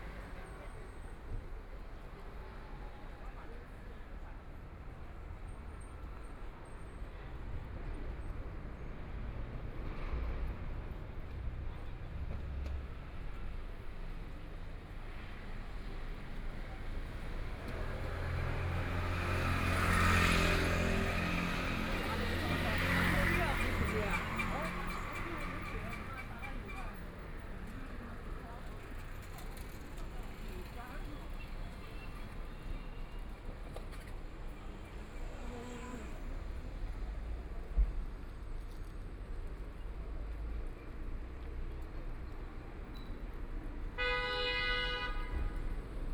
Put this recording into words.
Walking through the old neighborhoods, Market, Fair, The crowd gathered on the street, Voice chat, Traffic Sound, Binaural recording, Zoom H6+ Soundman OKM II